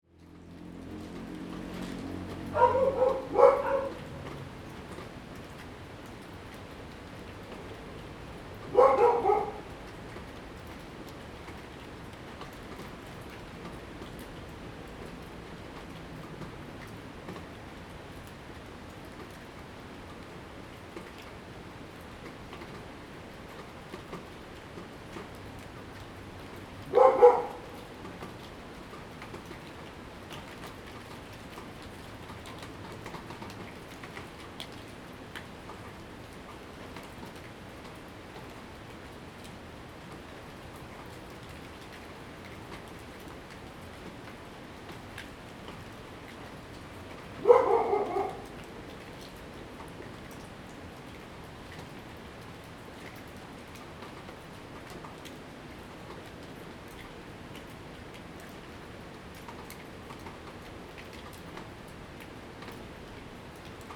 貨櫃屋辦公室, 埔里鎮桃米里 - Dogs barking
Dogs barking, raindrop
Zoom H2n MS+XY
Nantou County, Puli Township, 桃米巷55-5號, March 2016